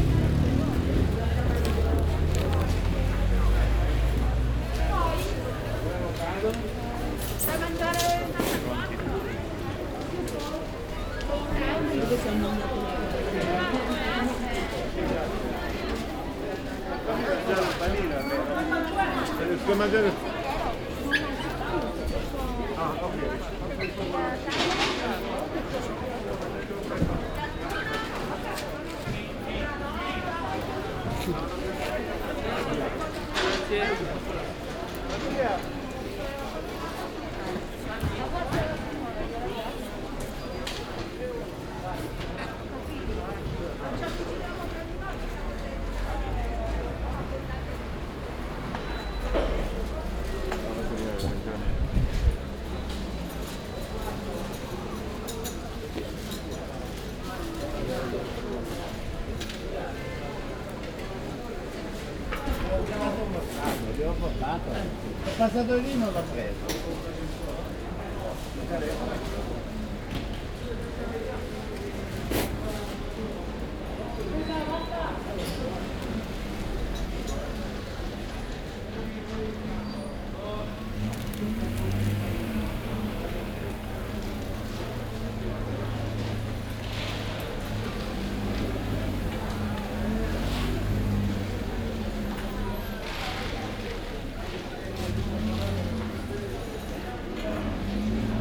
Ascolto il tuo cuore, città. I listen to your heart, city. Several chapters **SCROLL DOWN FOR ALL RECORDINGS** - “Crunchy Saturday market with conversation in the time of covid19”: Soundwalk
“Crunchy Saturday market with conversation in the time of covid19”: Soundwalk
Chapter CLXXXVII of Ascolto il tuo cuore, città. I listen to your heart, city.
Saturday, February 5th, 2022. Walk in the open-door square market at Piazza Madama Cristina, district of San Salvario, Turin, almost two years after the first emergency disposition due to the epidemic of COVID19.
Start at 11:56 a.m., end at h. 00:38 p.m. duration of recording 41’36”
The entire path is associated with a synchronized GPS track recorded in the (kml, gpx, kmz) files downloadable here: